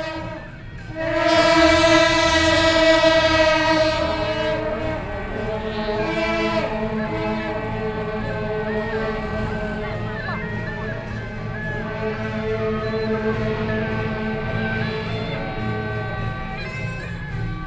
Beitou - Intersection
Traffic Noise, Traditional FestivalsSony, PCM D50 + Soundman OKM II
Beitou District, Taipei City, Taiwan, August 2013